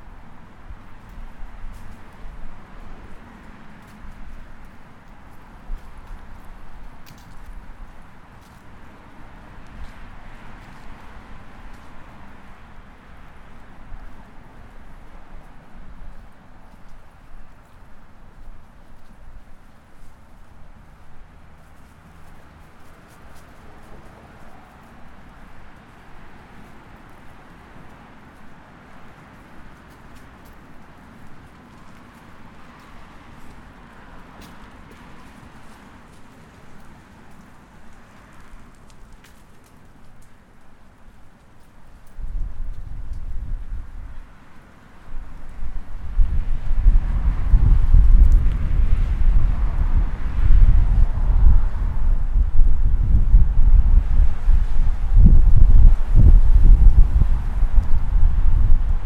The Glebe, Ottawa, ON, Canada - Walking With Sounds of a Ladder
Recorded with an H5 portable microphone on a pedestrian pathway close to a busy roadway. The metal tapping at the beginning was a painter on a ladder, which was later followed by regular car and walking sounds. It was an extremely windy day, so it wasn't optimal conditions for recording.
24 October 2016, ~16:00